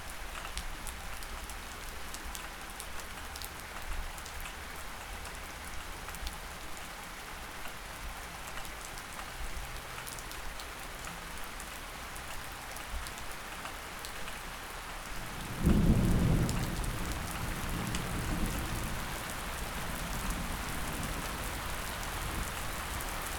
Poznan, Mateckiego Str, under balcony - fluctuating storm
recording under one of the balconies. rain scourging at different strength, various splashes, dripping, gushing. intense strom
Poznań, Poland, 24 July 2012